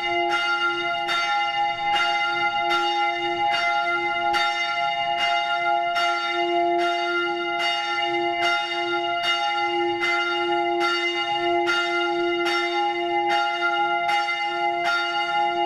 Grenoble, France - the bell in the street
Vespers is ringing at St André’s church, but, due to the process of restoration of its bell tower, the bell itself and its structure has been set in the small public passage behind the church.
This allows a very near recording, to less than 1 meter, so that one can hear, by the end, the mechanism of the clockwork.